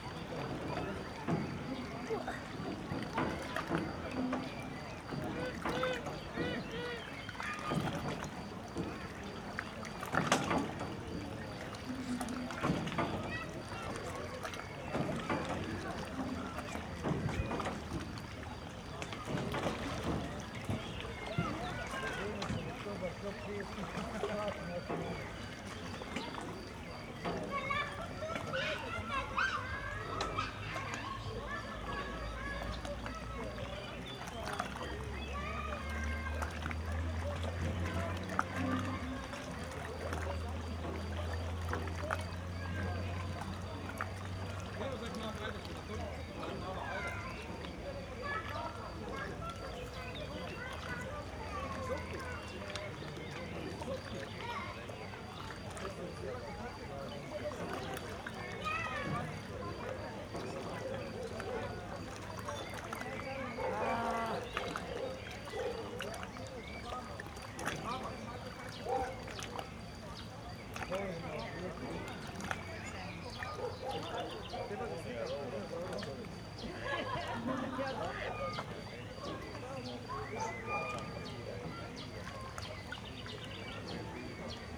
ambience at river Drava, lovely place, early sunday evening.